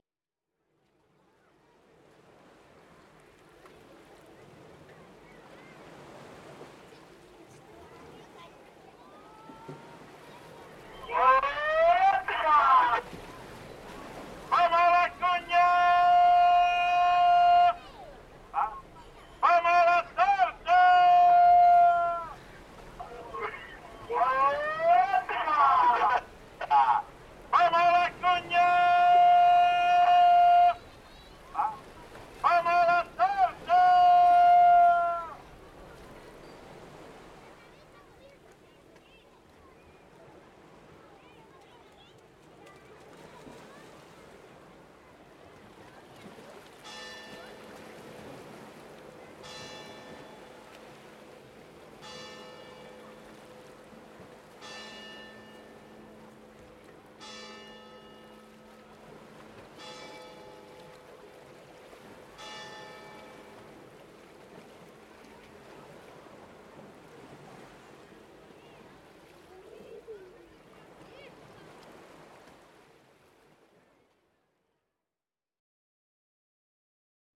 Urb., Salobreña, Granada, Espagne - Plage de Salobrena - Espagne Le marchand de pâtisseries
Plage de Salobrena - Espagne
Le marchand de pâtisseries sur la plage.
ZOO F6